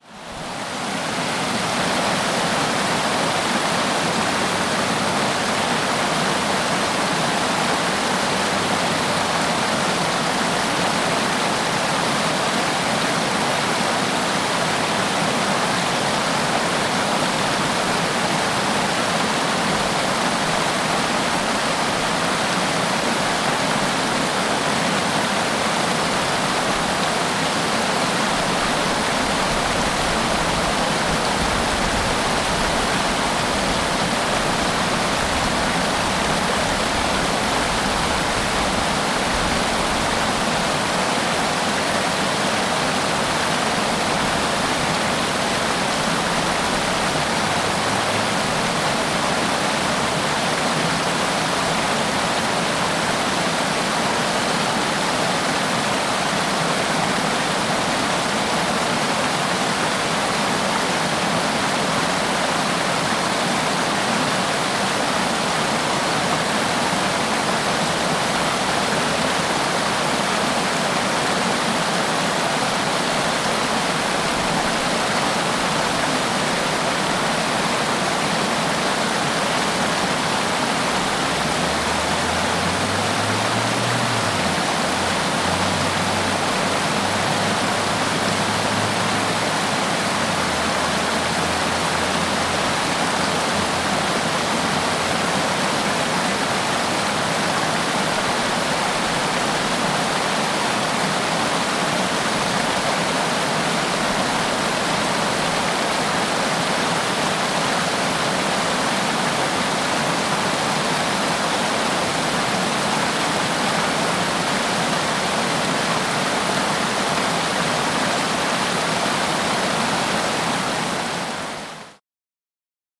Cedar Creek Park, Parkway Boulevard, Allentown, PA, USA - Waterfall at Lake Muhlenberg
The entire lake funnels into a small waterfall flowing over a man-made wall in the water. It's a peaceful location, and the sound is calming. It's possible to stand on that wall and let the water flow over your feet as you look over the whole lake - pure beauty.